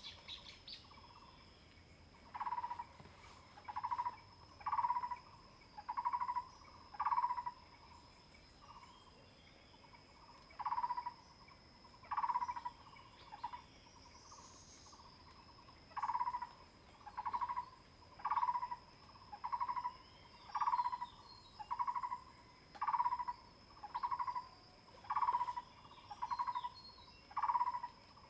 Birds singing, face the woods
Zoom H2n MS+ XY
Puli Township, 水上巷, 26 April 2016